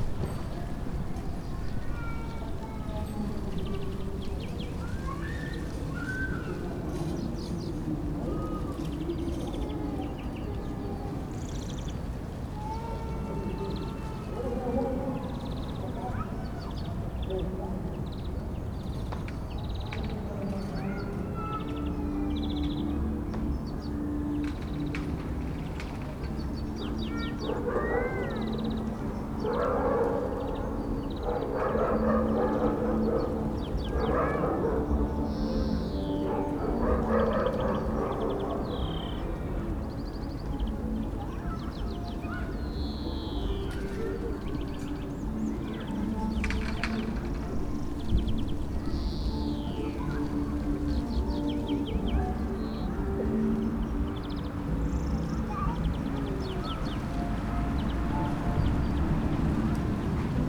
dry leaves of a bush in the wind, creaking fence, music of a fair (in the distance)
the city, the country & me: march 17, 2013